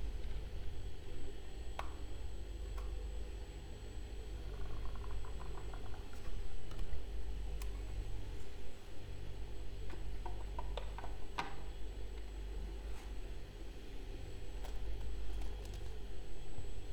corridors, mladinska - winter night, rain, intercom, radio, fridge, body